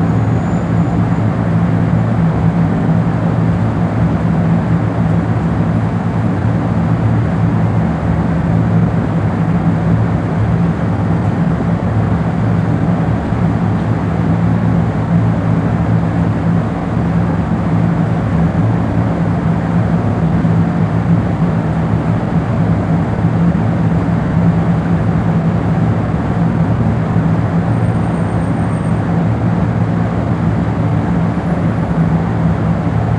Folie-Méricourt, Paris, France - Underground Ventilation, Paris
Drone sound of the undergound ventilation at Place de la Republique, Paris.
Zoom h4n